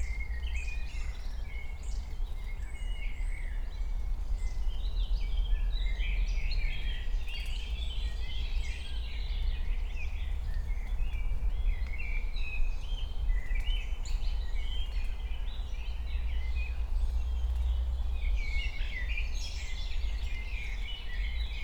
Königsheide, Berlin - forest ambience at the pond
11:00 drone, frogs, voices, fluttering wings, woodpecker